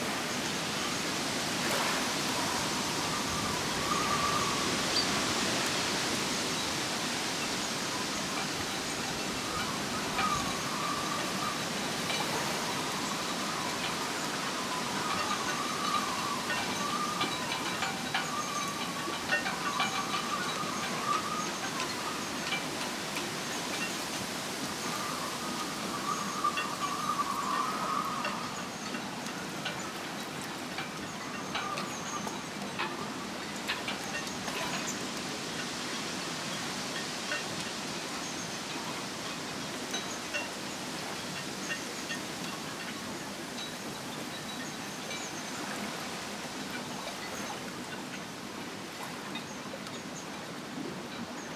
Another Place, Penrith, UK - Harbour sounds
Recorded with LOM Mikro USI's and Sony PCM-A10.